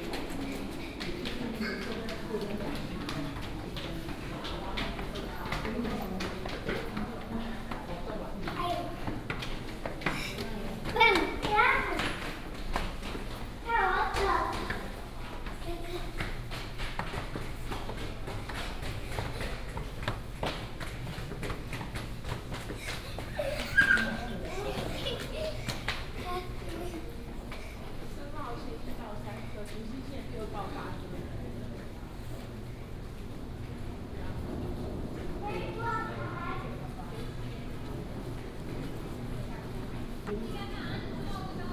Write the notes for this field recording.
Walking in the train station platform, Station Message Broadcast